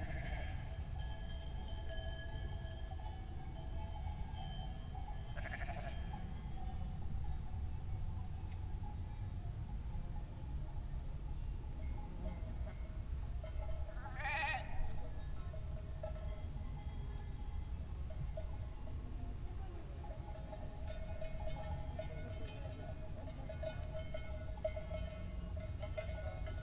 Lac Lautier - sheeps & Bells
Recording made on the shores of Lake Lautier (2350m) with a Roland R05 recorder